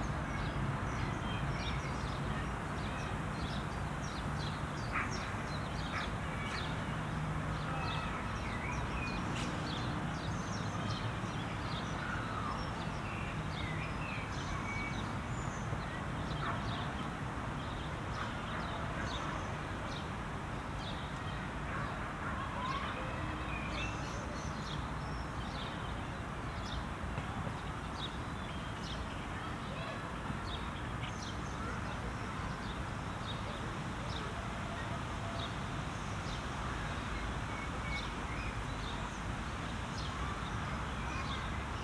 Birds, distant cars, tramways, sirens, a child crying, a few bikers.
Tech Note : Ambeo Smart Headset binaural → iPhone, listen with headphones.